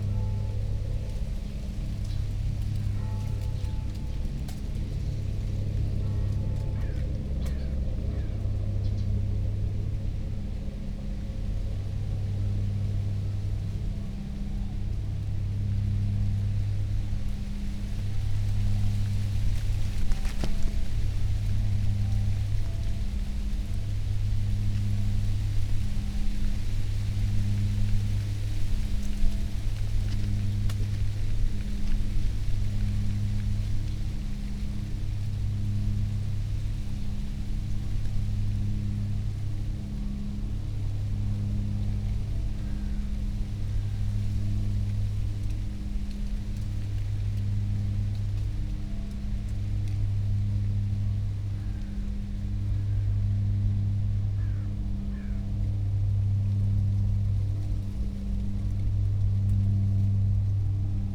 early autumn Sunday moning, hoping for gentle sounds of wind at my favourite place. But a marathon is going on nearby, helicopters flying around. However, when they move away, it creates heavy resonant pattern at low frequencies. Wind, leaves, crows, churchbells. Recording amplified.
(SD702, MKH8020)
Berlin, Germany, 25 September 2016, 10:30